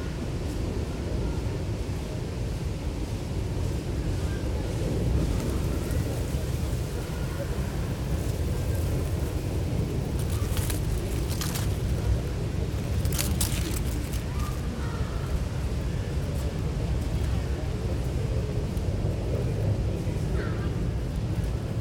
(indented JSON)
{
  "date": "2009-10-17 12:23:00",
  "description": "ambient city sounds filtering into the park in central London",
  "latitude": "51.50",
  "longitude": "-0.13",
  "altitude": "12",
  "timezone": "Europe/Tallinn"
}